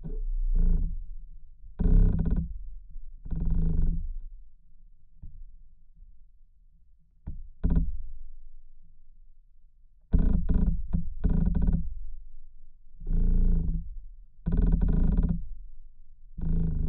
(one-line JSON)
{"title": "Stabulankiai, Lithuania, movements inside the tree", "date": "2020-04-12 16:20:00", "description": "another tree recording made with a pair oc contact mics and LOM geophone. inner vibrations. low frequencies, so listen through good speakers or headphones", "latitude": "55.52", "longitude": "25.45", "altitude": "174", "timezone": "Europe/Vilnius"}